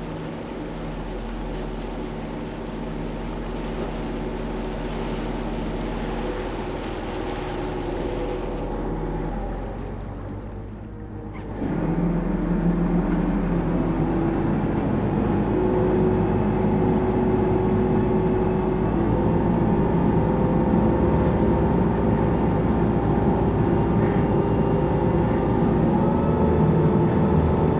The Oostende harbor is quite big. In aim to help the pedestrian to go on the other side of the city, called Vismijn (literally it means the fish mine), there's a shuttle boat. Recording of the boat on a winter foggy morning, crossing the harbor.
Oostende, Belgium